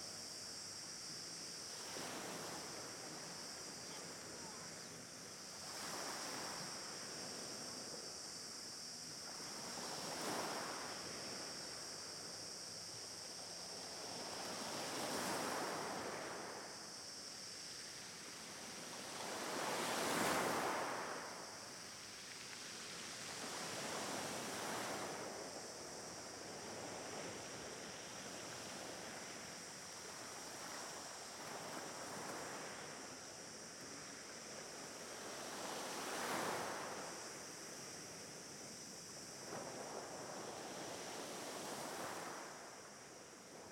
Cap-negret, Altea, Alicante, Espagne - Altea - Province d'Alicante - Espagne - Plage de Cap Negret
Altea - Province d'Alicante - Espagne
Plage de Cap Negret
Ambiance - cigales et vagues sur les galets... quelques voix
ZOOM F3 + AKG 451B
Alacant / Alicante, Comunitat Valenciana, España